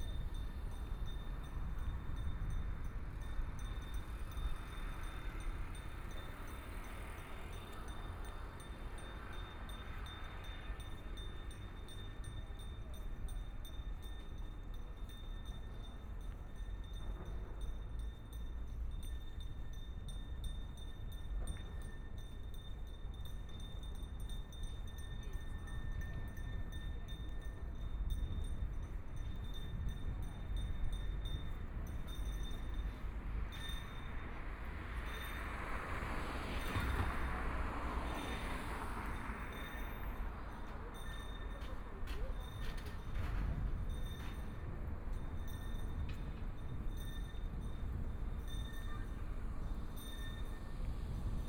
Garden Harbor Road, Shanghai - The sound of the bell
The sound of the bell, Riding a bicycle recycling garbage bells, Binaural recording, Zoom H6+ Soundman OKM II
Huangpu, Shanghai, China